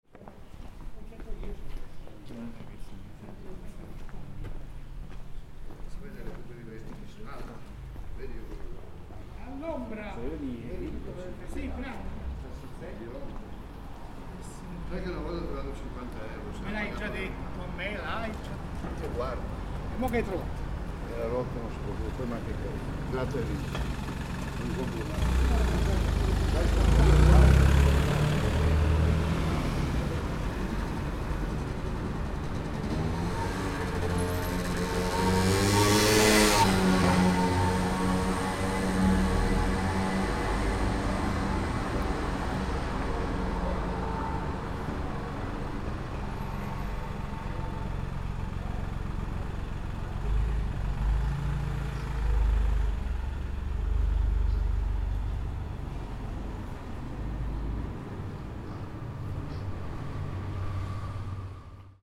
{"title": "Kirche San Martino, Platz", "date": "2011-07-23 11:50:00", "description": "Platz vor Kirche San Martino, Tirano", "latitude": "46.22", "longitude": "10.17", "altitude": "441", "timezone": "Europe/Rome"}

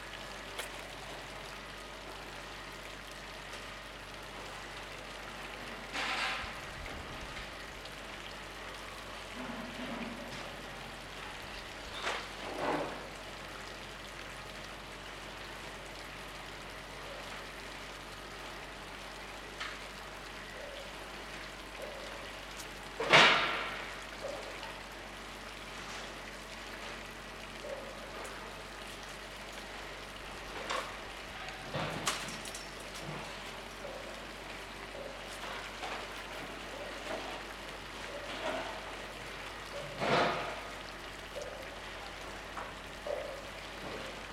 {"title": "L'Aquila, Santa Maria Paganica - 2017-05-29 09-Pzza S.Maria Paganica", "date": "2017-05-29 15:20:00", "latitude": "42.35", "longitude": "13.40", "altitude": "734", "timezone": "Europe/Rome"}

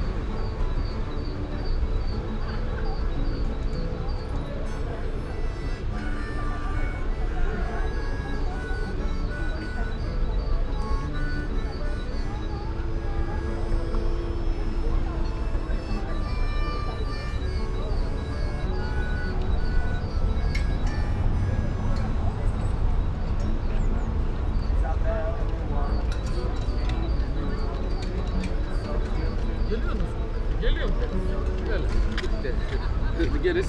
{"title": "berlin, paul-lincke-ufer: promenade - the city, the country & me: singer vs. construction site", "date": "2014-03-04 14:25:00", "description": "singer at the terrace on the opposite of the landwehrkanal, noise of a nearby construction site, promenadersw, byciclists\nthe city, the country & me: march 4, 2014", "latitude": "52.49", "longitude": "13.42", "altitude": "38", "timezone": "Europe/Berlin"}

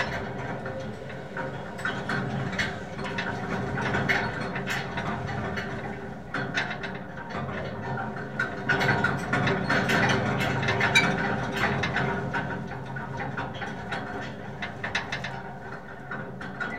{"title": "Rue de Mirepoix, Toulouse, France - metalic vibration 02", "date": "2022-03-26 16:00:00", "description": "wind, scaffolding, metal moving structure\nCaptation : ZOOMh4n + AKG C411PP", "latitude": "43.60", "longitude": "1.44", "altitude": "153", "timezone": "Europe/Paris"}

